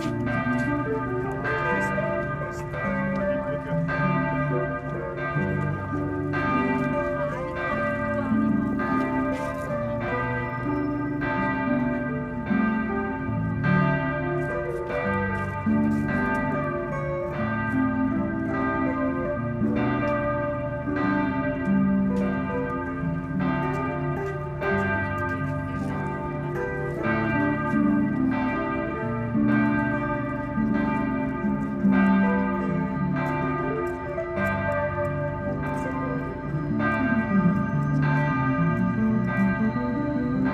Mitte, Berlin, Germany - Cathedral Bell with guitar accompaniment, or vice versa
Late afternoon busker plays in time, sort of, with the cathedral bell.
18 October